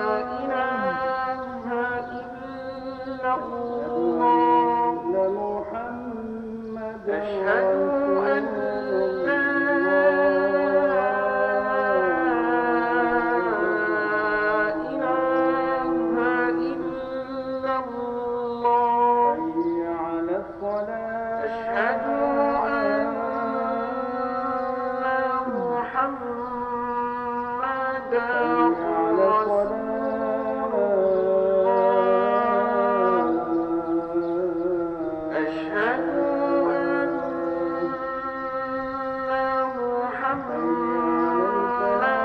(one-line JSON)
{"title": "Jaww, Bahreïn - Mosquées de Jaww - Bahrain - appel à la prière de 18h28", "date": "2021-06-06 18:28:00", "description": "Mosquées de Jaww - Bahrain - appel à la prière de 18h28\nEnregistrement de l'appel à la prière des 2 mosquées de la ville.", "latitude": "26.00", "longitude": "50.62", "altitude": "7", "timezone": "Asia/Bahrain"}